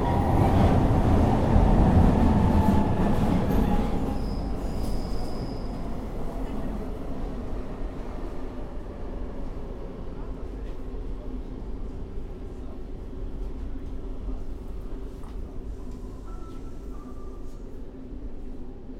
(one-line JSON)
{"title": "City of Brussels, Belgium - Metro between De'Brouckere and Gare Centrale", "date": "2013-06-19 14:15:00", "description": "The Metro recorded with EDIROL R-09.", "latitude": "50.85", "longitude": "4.36", "altitude": "34", "timezone": "Europe/Brussels"}